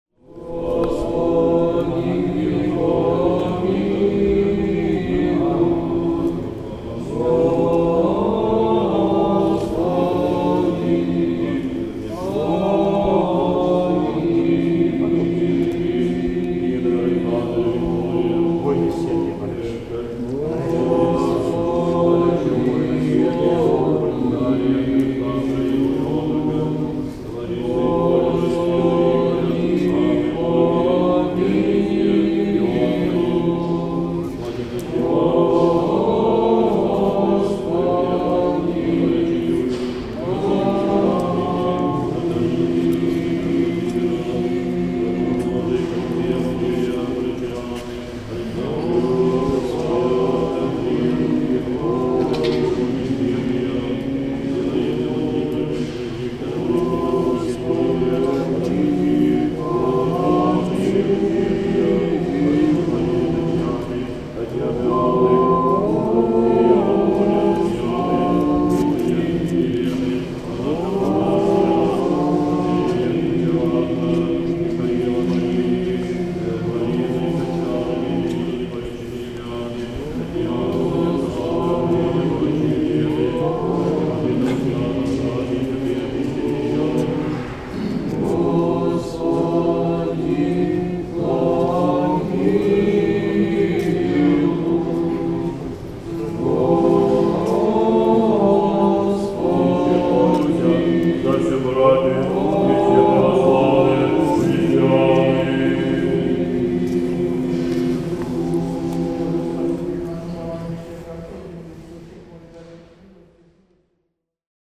St. Petersburg, Russia - Lord have mercy! The song in the Alexander Nevsky Monastery.
Alexander Nevsky Monastery.
The Alexander Nevsky Monastery complex is home to some of the oldest buildings in the city, as well as to cemeteries which contain the graves of some of the giants of Russian culture, including Tchaikovsky, Dostoevsky, and Glinka.
I love this monastery. I like to be there. The serenity and beauty come to the soul in this place.
Recored with a Zoom H2.